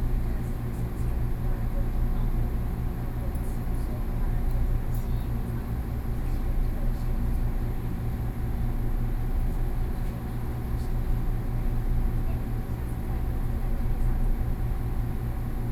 In the compartment, Train crossing, Sony PCM D50+ Soundman OKM II